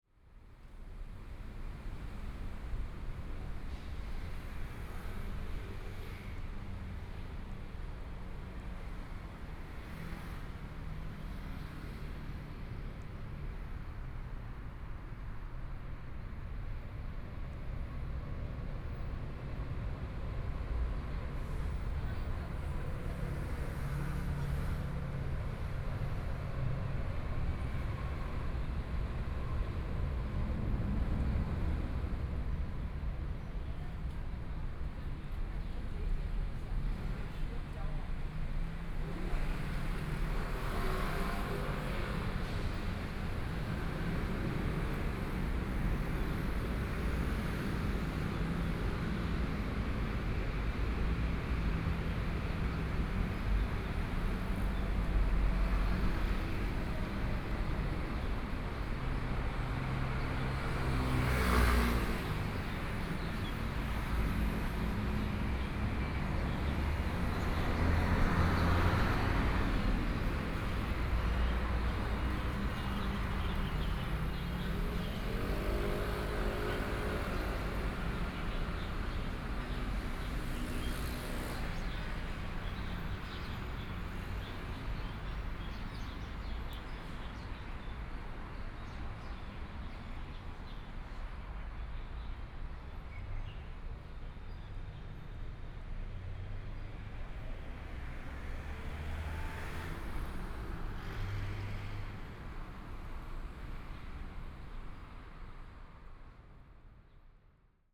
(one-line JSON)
{"title": "Minsheng E. Rd., Songshan Dist. - on the Road", "date": "2014-02-08 13:34:00", "description": "walking on the Road, Traffic Sound, Birds singing, Binaural recordings, Zoom H4n+ Soundman OKM II", "latitude": "25.06", "longitude": "121.54", "timezone": "Asia/Taipei"}